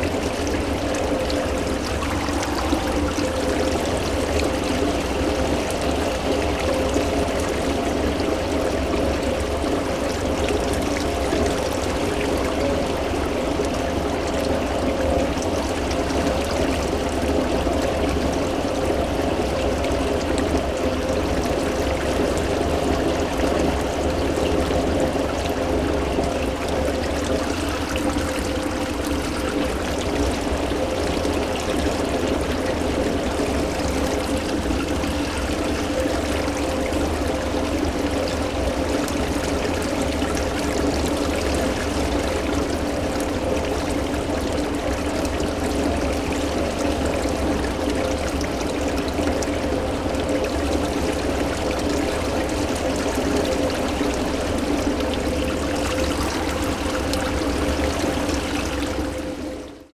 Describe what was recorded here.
Pyramide du Louvre, Moteur et tuyau sous le bassin